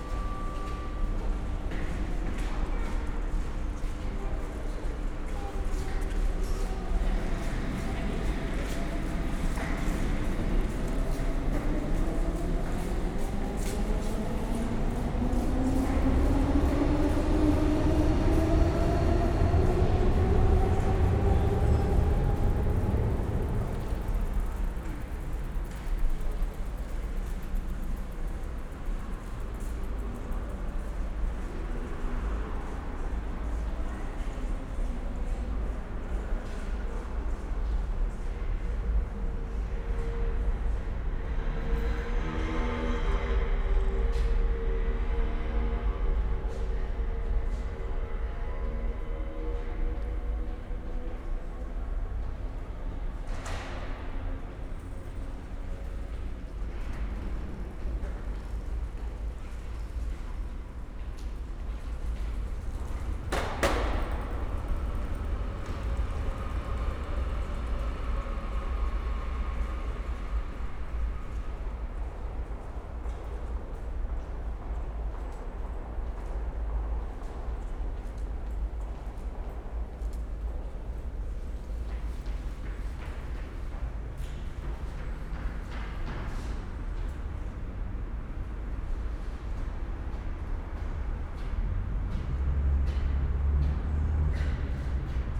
Grünau, Berlin - S-Bahn, station ambience
S-Bahn station, Grünau near Berlin, station ambience, Sunday afternoon
(SD702, DPA4060)